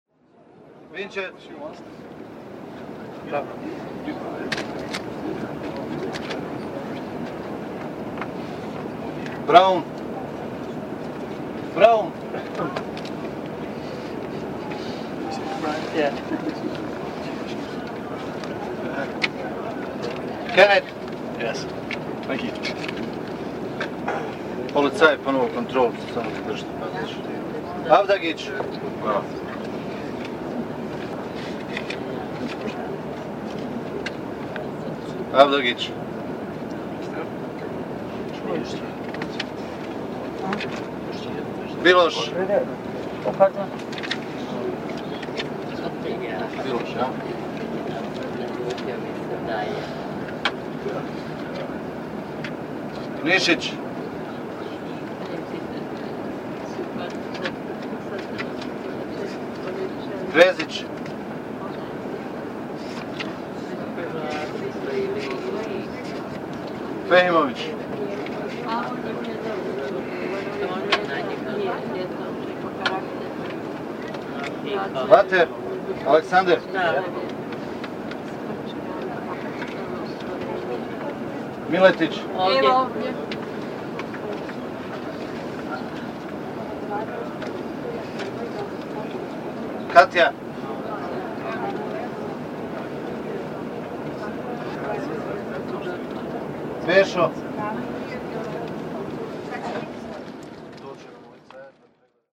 Neum, Bosnia and Herzegovina - Checkpoint
September 1996, Bosnia.
In a bus. A customs officer made a control on passports and he's giving back every document to each person.